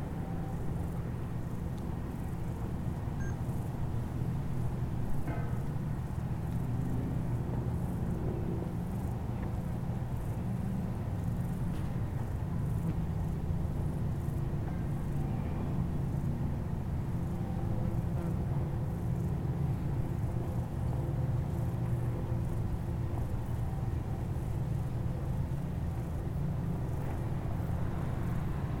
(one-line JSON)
{"title": "Rte du Port, Bourdeau, France - Cloche de Bourdeau", "date": "2020-07-19 12:00:00", "description": "Sonnerie de la cloche de la chapelle de Bourdeau à midi. Beaucoup de bruit de circulation automobile.", "latitude": "45.68", "longitude": "5.85", "altitude": "321", "timezone": "Europe/Paris"}